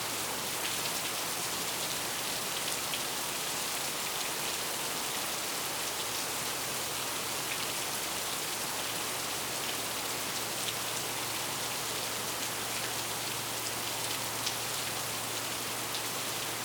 E Coll St, New Braunfels, TX, Verenigde Staten - thunder and trains in New Braunfels, Texas
thunder and trains in New Braunfels, Texas